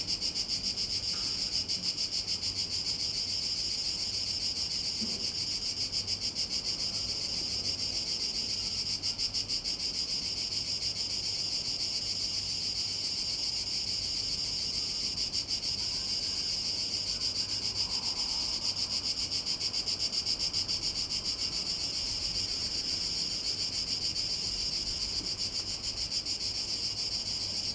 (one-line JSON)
{"title": "Punat, Croatia, Cicada In Tree - Cicada In Tree", "date": "2013-07-28 14:27:00", "latitude": "45.02", "longitude": "14.63", "altitude": "17", "timezone": "Europe/Zagreb"}